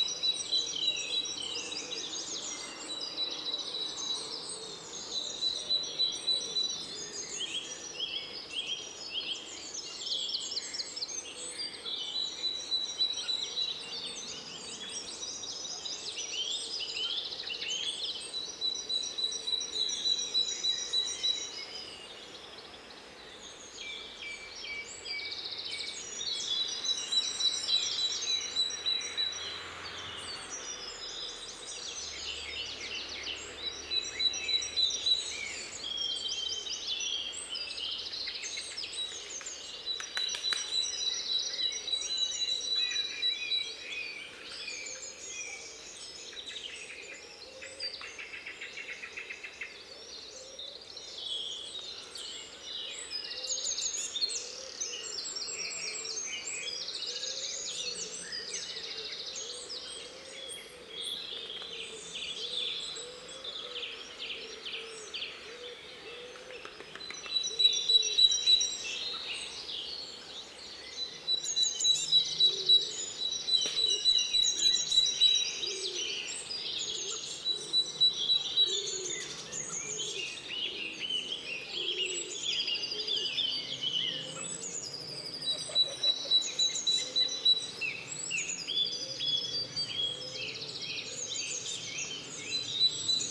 Prospect, Box, Corsham, UK - Dawn Chorus
Binaural recording of dawn chorus on a windless sunny morning. Using Zoom H5 recorder with Luhd PM-01 Binaural in-ear microphones.
14 April 2017, ~06:00